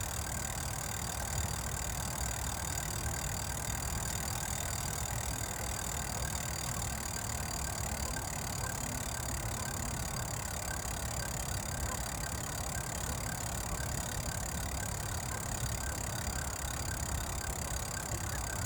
Tempelhofer Feld, Berlin - wind wheel
improvised wind wheel turning and clicking in a fresh wind from south west
(PCM D50)